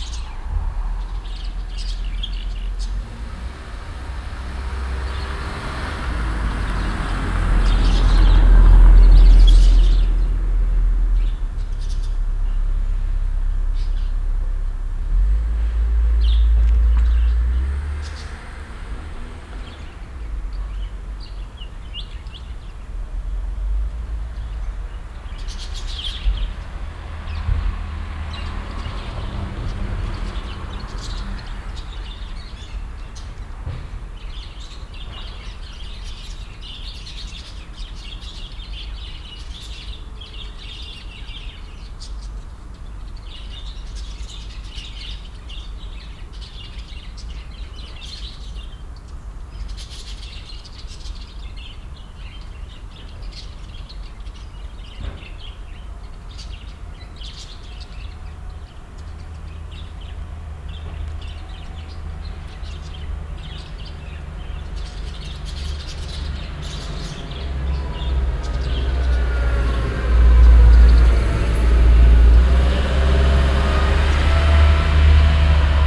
refrath, siegenstrasse, vogelbauer
morgens an grossvogelgehege nahe bahnstation, ein pkw startet und fährt vorbei, eine bahn fährt ein
soundmap nrw - social ambiences - sound in public spaces - in & outdoor nearfield recordings